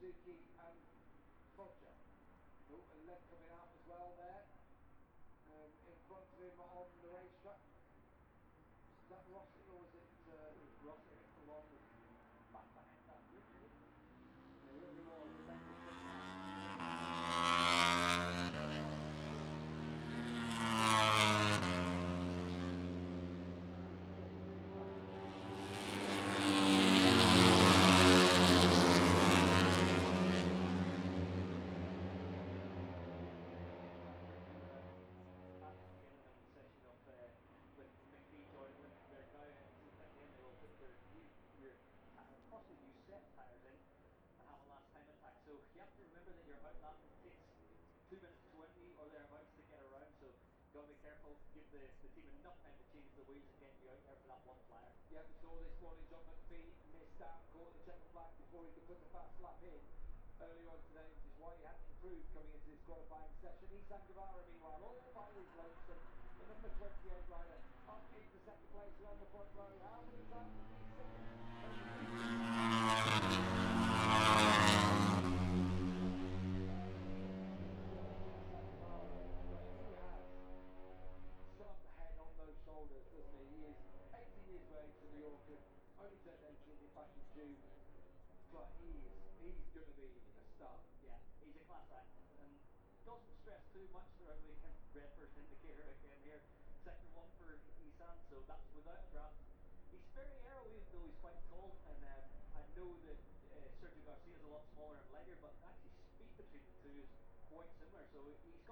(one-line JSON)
{"title": "Silverstone Circuit, Towcester, UK - british motorcycle grand prix 2022 ... moto three ...", "date": "2022-08-06 13:00:00", "description": "british motorccyle grand prix 2022 ... moto three qualifying two ... zoom h4n pro integral mics ... on mini tripod ...", "latitude": "52.08", "longitude": "-1.01", "altitude": "158", "timezone": "Europe/London"}